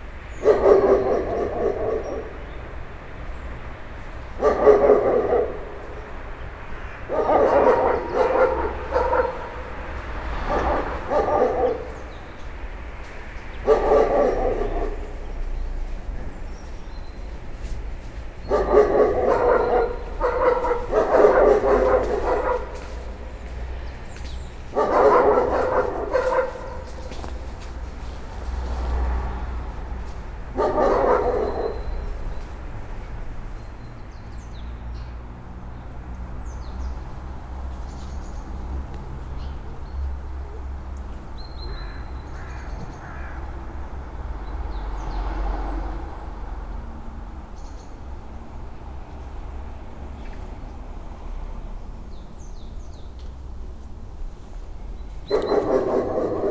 Hayange, France - Old dog and the old mine
Near to the Gargan mine in Hayange, an old but still nasty dog is barking. Lorraine area is so welcoming everytime we go here ! At the end of the recording, a goshawk is hunting crows.